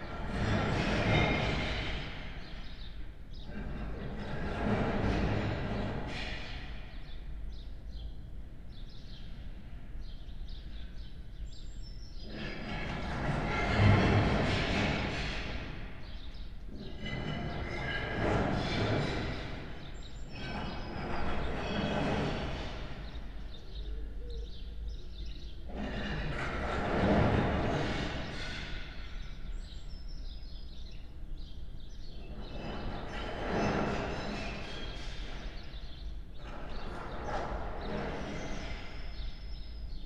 Berlin Bürknerstr., backyard window - backyard ambience /w deconstruction
backyard ambience, sound of debris falling down, construction works
(raspberry pi zero, IQAudio zero, Primo EM172 AB)